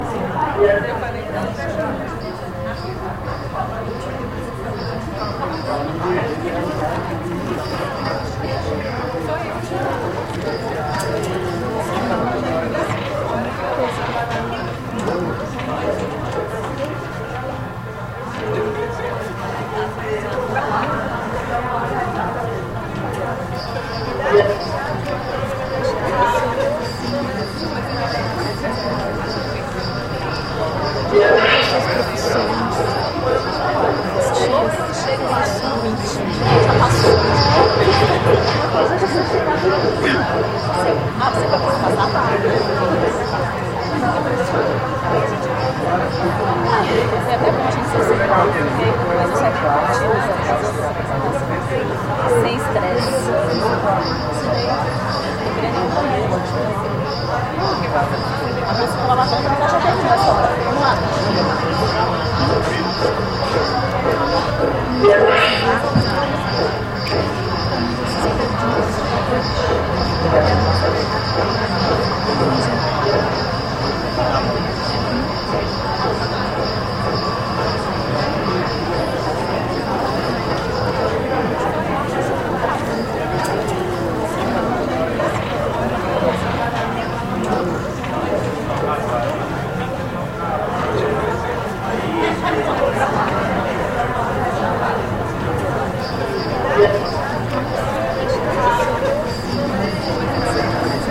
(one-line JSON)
{"title": "Brasília, DF, Brasil - 6º Encontro Arteduca", "date": "2012-07-26 09:00:00", "description": "Encontro acadêmico do Arteduca/UnB", "latitude": "-15.81", "longitude": "-47.90", "altitude": "1112", "timezone": "America/Sao_Paulo"}